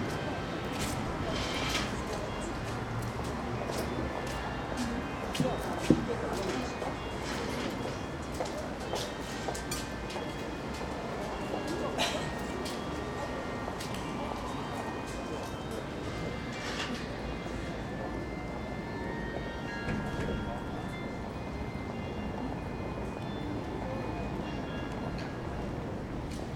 schauspiel köln - vor der vorstellung, aussen / before the show, outside
menschen warten auf den beginn der vorstellung, 4711 glockenspiel im hintergrund
people waiting for the show, 4711 chime in the background